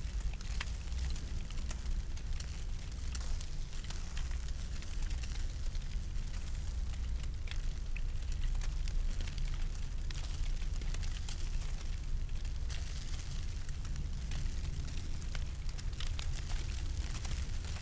내리는雪＿overnight snowfall
Winter 2020/21